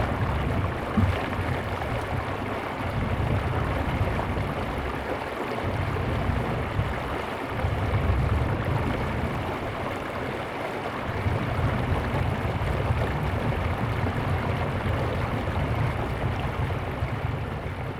Romania - waterfall from the copper mine
The old church of Geamana village is partially submerged by polluted water tainted with different chemicals from the copper mine near Rosia Montana, central Romania. The lake is changing colour according the technology used up in the mine.